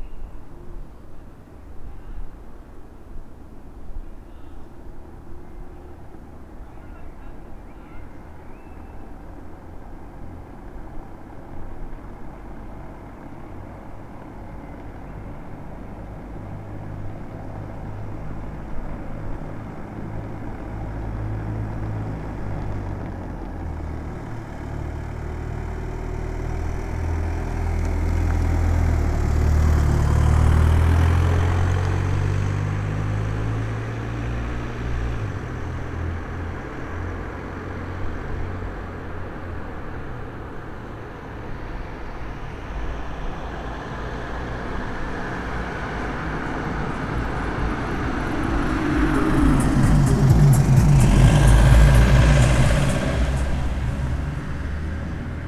Berlin: Vermessungspunkt Maybachufer / Bürknerstraße - Klangvermessung Kreuzkölln ::: 20.03.2011 ::: 04:31
Berlin, Germany